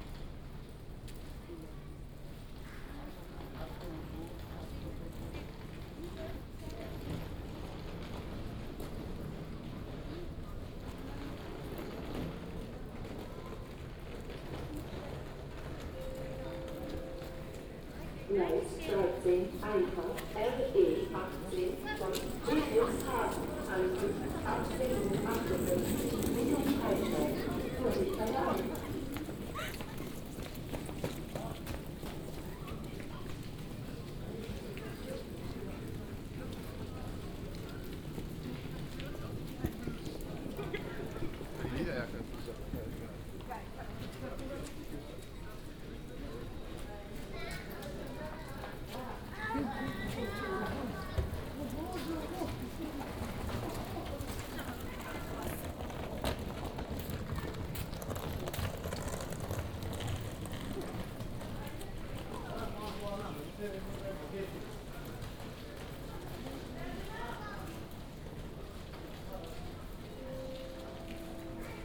Osnabrück Hbf, Deutschland - station ambience
Osnabrück Hauptbahnhof, waiting for departure, main station ambience
(Sony PCM D50, OKM2)
Osnabrück, Germany, 5 April 2019, 17:55